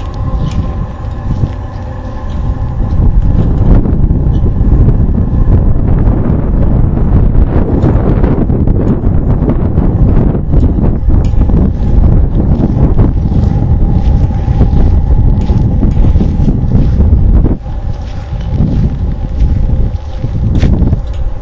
scary residential plaza, armory square